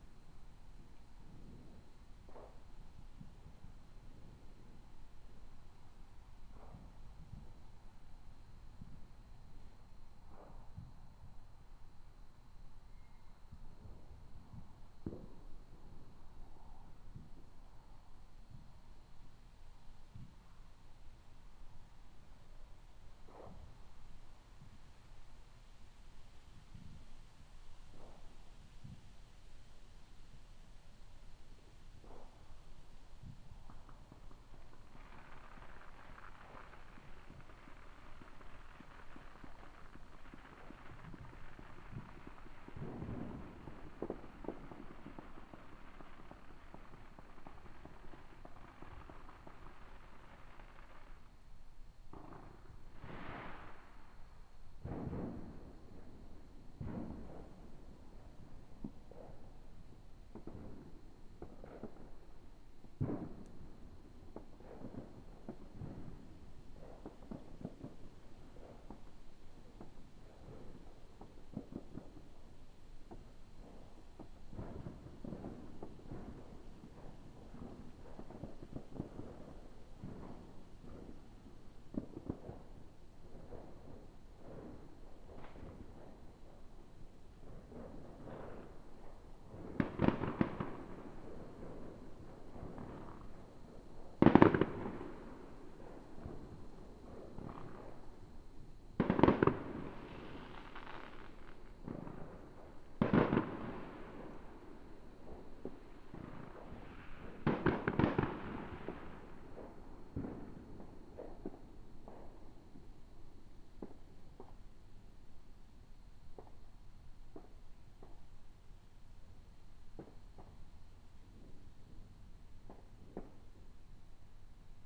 The sounds of my neighborhood the night prior to Independence Day with a lot of people shooting off fireworks.